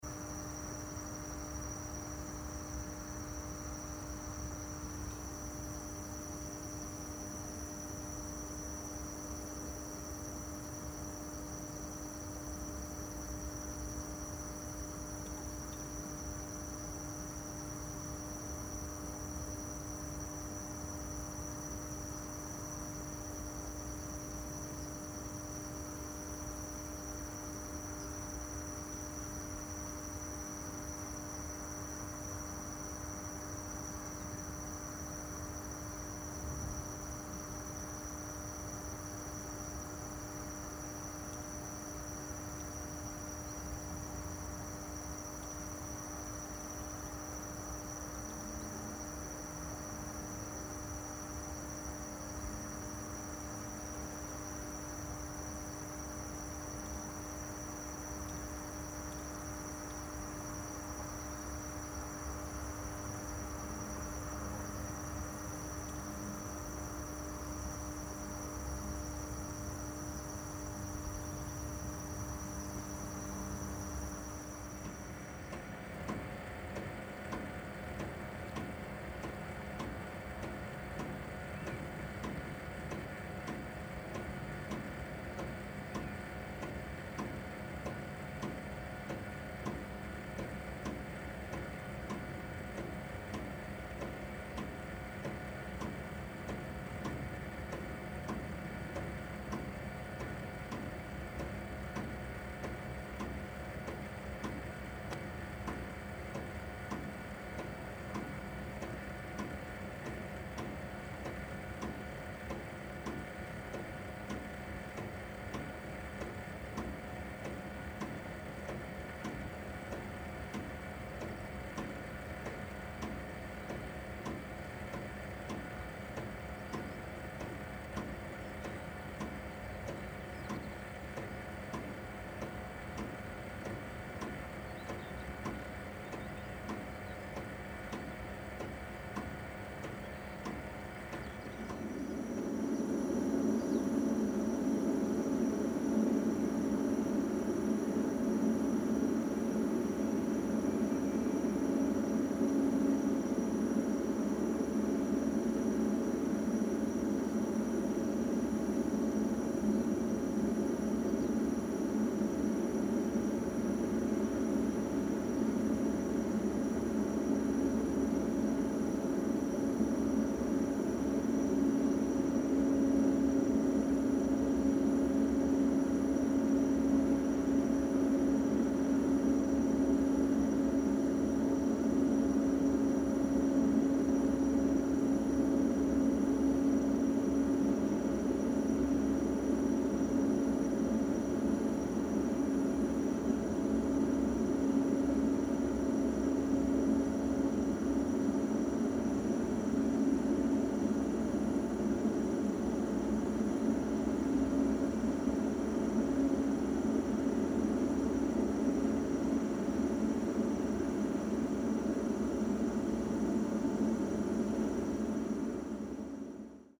Holz, Germany - disappeared - Water pumps
Despite the Google image this village no longer exists. All that remains are small mounds of yellow earth waiting to be eaten up as part of the huge Garzweiler opencast brown coal mine. Lines of water pumps dot the landscape to dry the soil and make it easier for the machines to excavate. This is the sound of 3 of them.
Jüchen, Germany